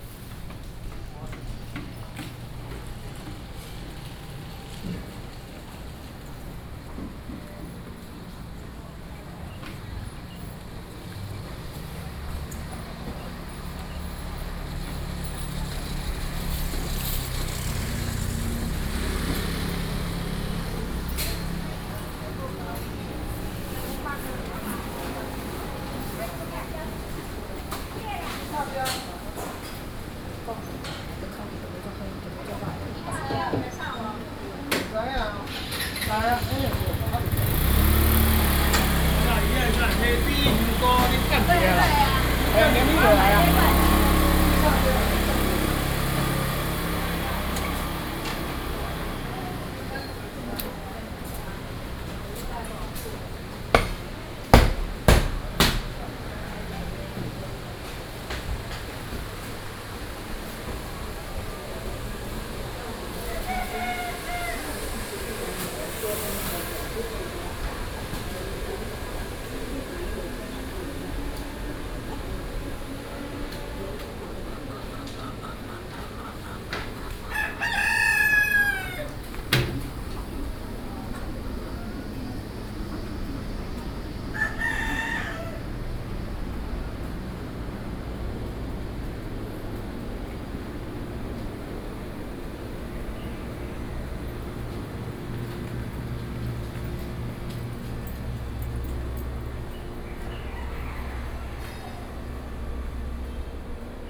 Minyou St., Banqiao Dist., New Taipei City - Walking through the market
Walking through the market
Sony PCM D50+ Soundman OKM II